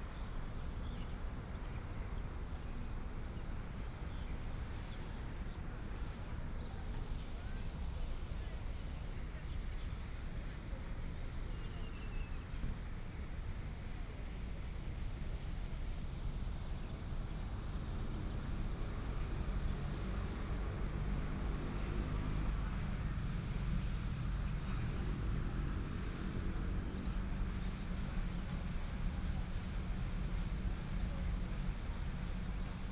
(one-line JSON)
{"title": "士校大池, Zhongli Dist., Taoyuan City - Big pool", "date": "2017-11-29 08:46:00", "description": "in the Big pool, Traffic sound, Birds singing, Binaural recordings, Sony PCM D100+ Soundman OKM II", "latitude": "24.94", "longitude": "121.26", "altitude": "163", "timezone": "Asia/Taipei"}